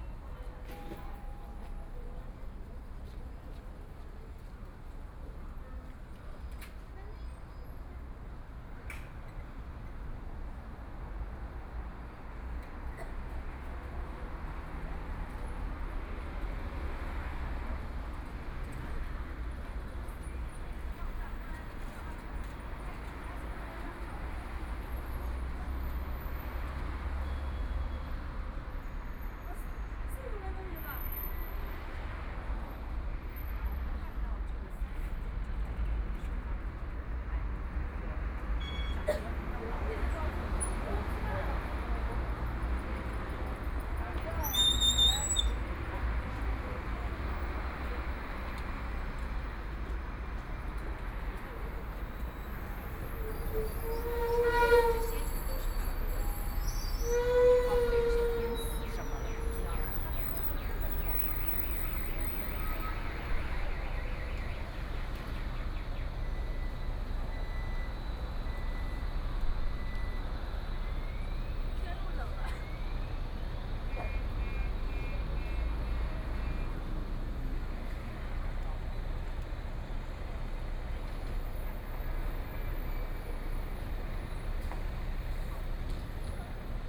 South Xizang Road, Shanghai - In front of the Convenience stores
In front of the Convenience stores, Traffic Sound, Various brake sounds, Binaural recording, Zoom H6+ Soundman OKM II
Shanghai, China